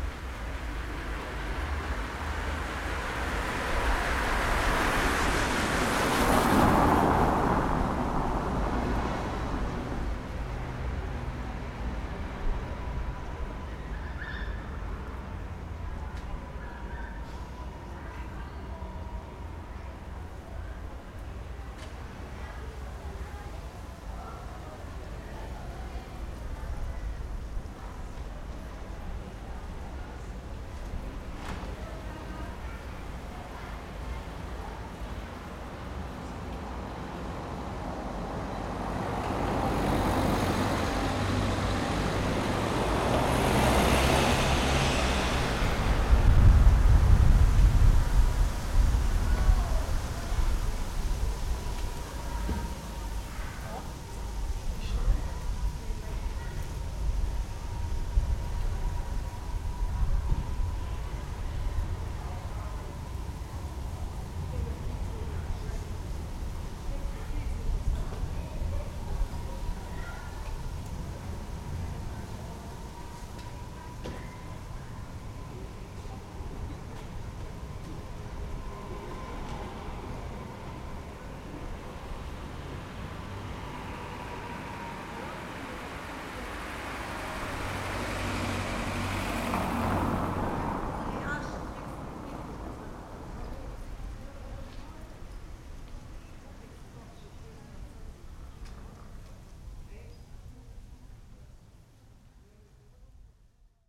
leipzig, nachbarschaftsschule in der gemeindeamtsstraße.
vor der nachbarschaftsschule in der gemeindeamtsstraße. startende autos, passanten, schwatzende lehrerinnen.
Leipzig, Deutschland